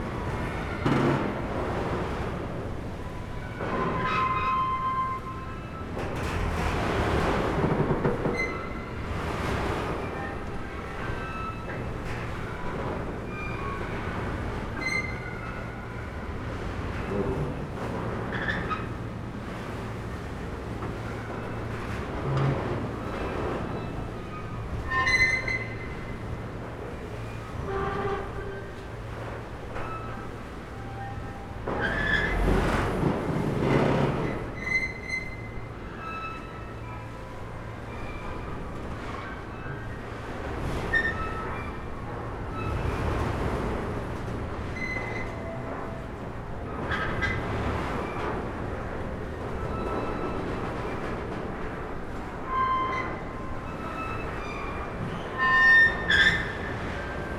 Cais Gás, Lisboa, Portugal - Creaking pontoon
Ferry pontoon creaking with the waves on the Tagus river. Recorded with Zoom H5 and the standard XYH-5 stereo head (XY 90° configuration).
12 February 2017